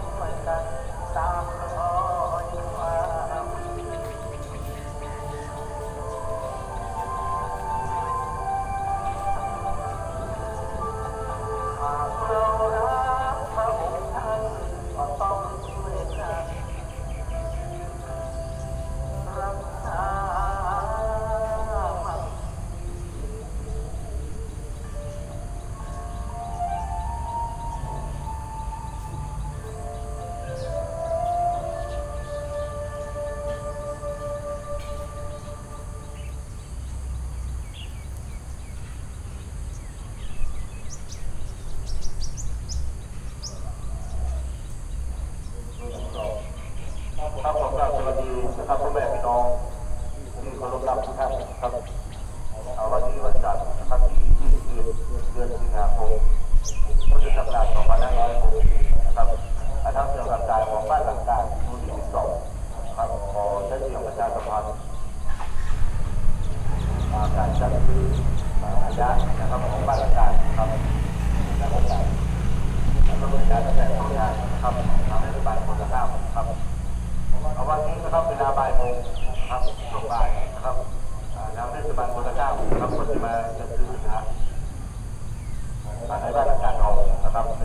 Tambon Hang Dong, Amphoe Hot, Chang Wat Chiang Mai, Thailand - Fahrender Händler Chom Thong bei Puh Anna
A pedler driving by in some distance from Puh Annas guesthouse, resonating nicely in the natural sounds of the surrounding.